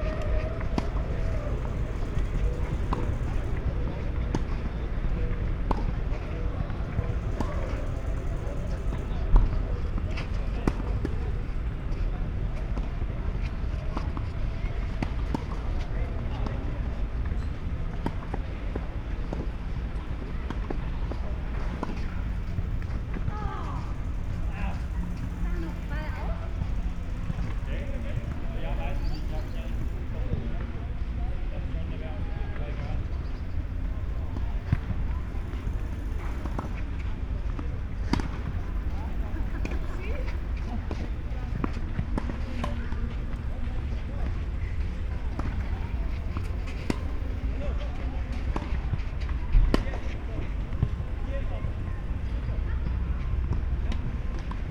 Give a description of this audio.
Köln, Gleisdreieck, rail triangle, field ambience, sound of tennis and soccer trainings, trains passing-by, (Sony PCM D50, Primo EM172)